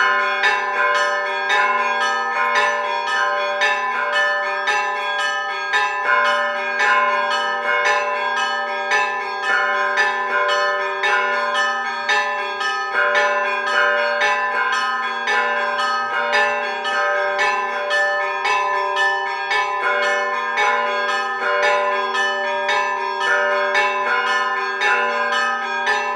Epar.Od. Triantarou-Falatadou, Tinos, Greece - bell ringing